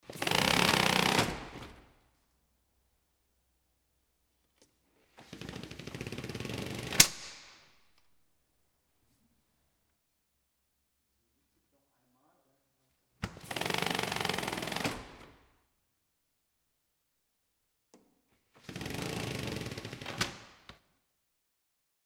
hosingen, centre d'intervention, signals and alarm sounds - hosingen, centre dintervention, blinds
This is the sound of the opening and closing of the blinds of the fire truck. They need to be opened to inspect the stored tools before the truck leaves to an operation.
Hosingen, Einsatzzentrum, Rollos
Das ist das Geräusch vom Öffnen und Schließen der Rollos des Feuerwehrwagens. Sie müssen geöffnet werden, um die geladenen Werkzeuge zu überprüfen, ehe der Wagen zu einem Einsatz fährt.
Hosingen, centre d'intervention, roulants
Ceci est le bruit de l’ouverture et de la fermeture des volets roulants sur le camion de pompiers. Ils doivent être ouverts afin d’inspecter les outils stockés avant que le camion ne parte en opération.
13 September 2011, ~12:00